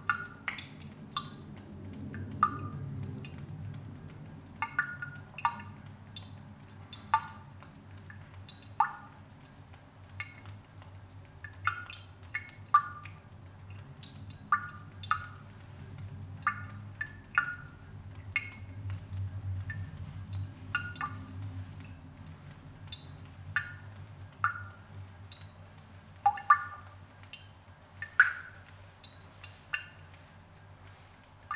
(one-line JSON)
{"title": "Chapel of the Chimes, Oakland, CA, USA - Chapel of the Chimes Fountain", "date": "2016-01-10 03:15:00", "description": "Recorded with a pair of DPA 4060s and a Marantz PMD661", "latitude": "37.83", "longitude": "-122.25", "altitude": "51", "timezone": "America/Los_Angeles"}